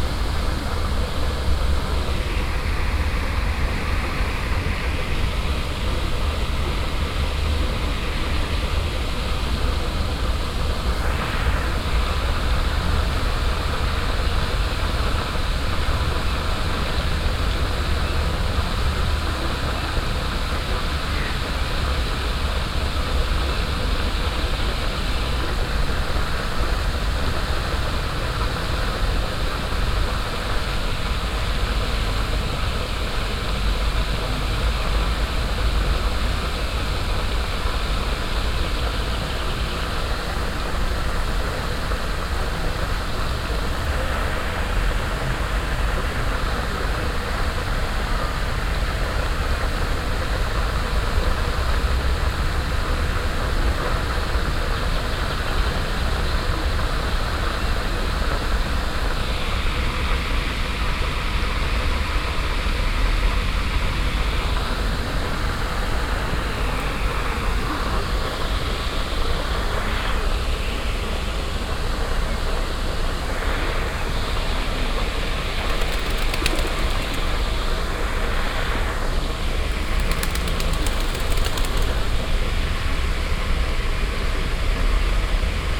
essen, city park, pigeons and fountain
Under a tree nearby the artificial lake, a group of pidgeons and the permanent white noise of a water fountain on the lake. In the end pigeons flying away in small groups.
Projekt - Klangpromenade Essen - topographic field recordings and social ambiences